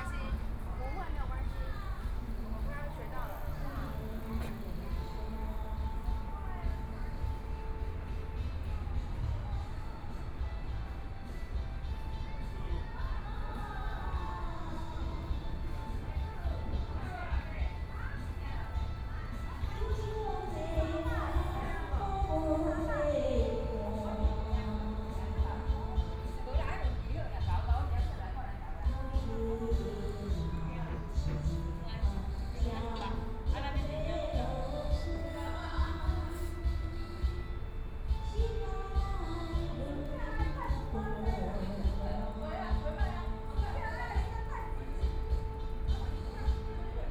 Community recreation center, Several women chatting and singing, Traffic Sound
Please turn up the volume a little
Binaural recordings, Sony PCM D100 + Soundman OKM II